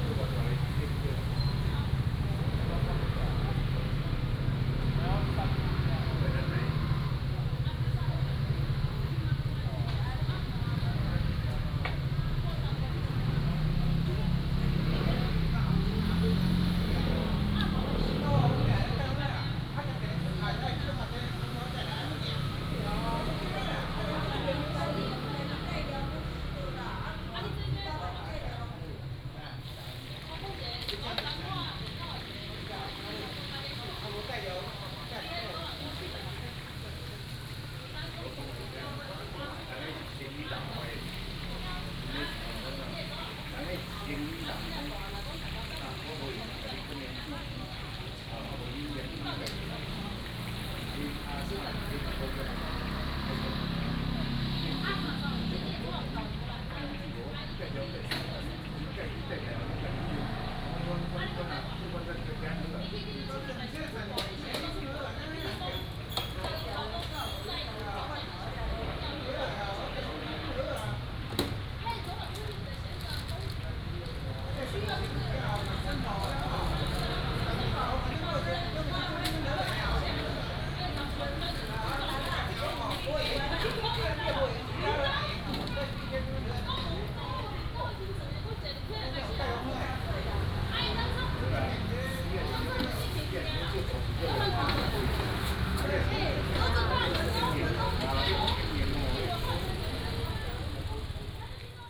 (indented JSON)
{
  "title": "本福村, Hsiao Liouciou Island - in front of the temple",
  "date": "2014-11-01 20:14:00",
  "description": "In the square in front of the temple, Fried chicken shop",
  "latitude": "22.35",
  "longitude": "120.38",
  "altitude": "12",
  "timezone": "Asia/Taipei"
}